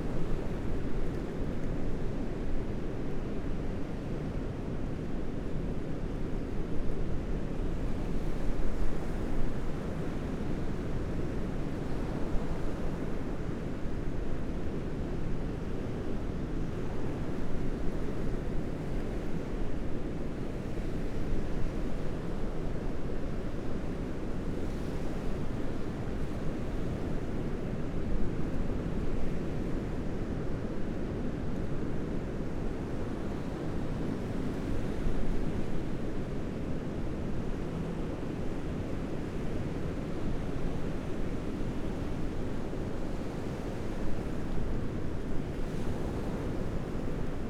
Sagunto, Valencia, España - Windy Beach
Windy Beach recording